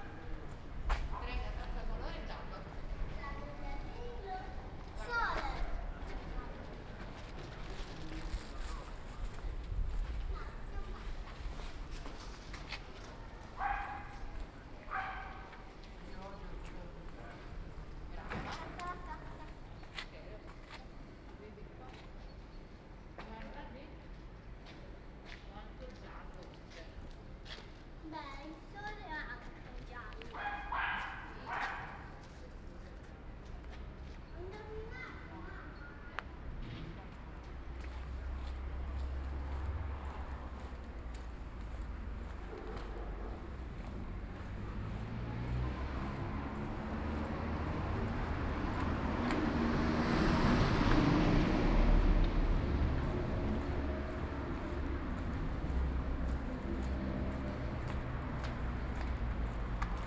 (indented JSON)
{
  "title": "Ascolto il tuo cuore, città. I listen to your heart, city. Several chapters **SCROLL DOWN FOR ALL RECORDINGS** - Short walk and paper mail in the time of COVID19 Soundwalk",
  "date": "2020-04-11 17:46:00",
  "description": "Chapter XLI of Ascolto il tuo cuore, città. I listen to your heart, city\nSaturday April 11th 2020. Short walk to Tabaccheria to buy stamp and send a paper mail to France, San Salvario district Turin, thirty two days after emergency disposition due to the epidemic of COVID19.\nStart at 5:46 p.m. end at 6:00 p.m. duration of recording 14’08”\nThe entire path is associated with a synchronized GPS track recorded in the (kmz, kml, gpx) files downloadable here:",
  "latitude": "45.06",
  "longitude": "7.68",
  "altitude": "243",
  "timezone": "Europe/Rome"
}